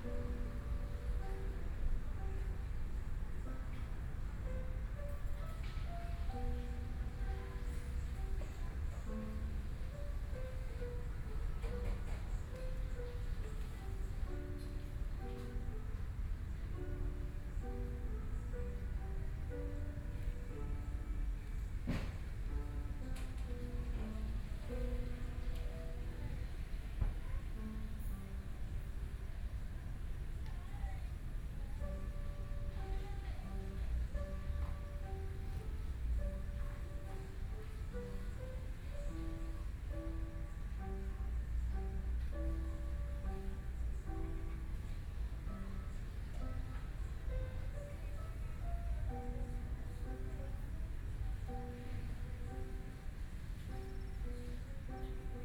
碧湖公園, Taipei City - at night
The park at night, Piano sound, Environmental sounds
Binaural recordings
Taipei City, Taiwan, 19 March 2014